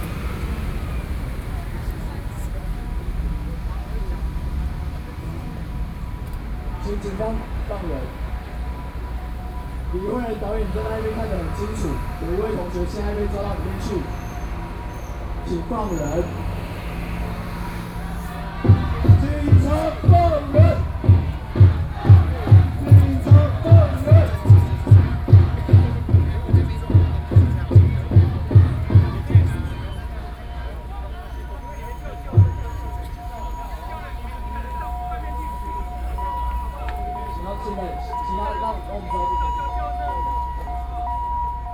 行政院, 台北市中正區忠孝東路一段1號 - SoundMap20121127-1
Students' protests in the Executive Yuan, Binaural recordings